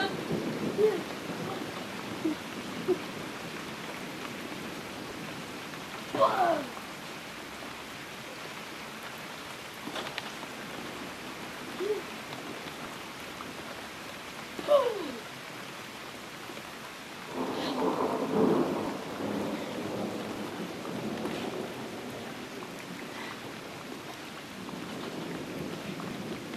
Mortsel, Mortsel, België - garden
this recording is made in my garden by 2 microphones
those microphones record each day automatic at 6, 12, 18 & 24
Mortsel, Belgium, 5 June 2015